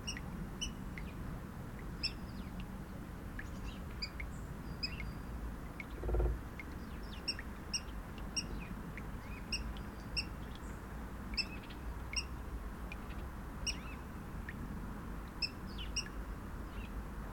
Sur un ponton flottant baies de Mémard au bord de l'eau près d'une roselière, faible activité des oiseaux en cette saison, les cris répétitifs d'une poule d'eau, quelques moineaux, des canards colvert, goelands au loin.....